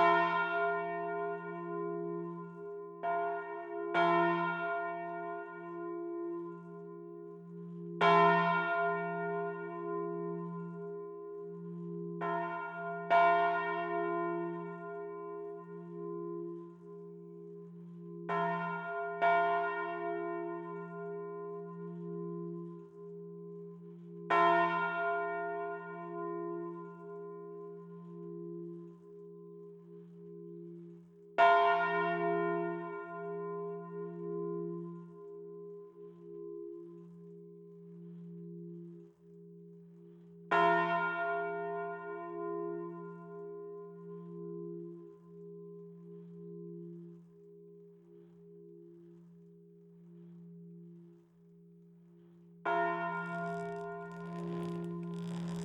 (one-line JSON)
{"title": "Rue du Ctr de l'Église, Lederzeele, France - Lederzeele - carillon automatisé et volée", "date": "2020-06-30 12:00:00", "description": "Lederzeele - carillon automatisé et volée\n12h", "latitude": "50.82", "longitude": "2.30", "altitude": "30", "timezone": "Europe/Paris"}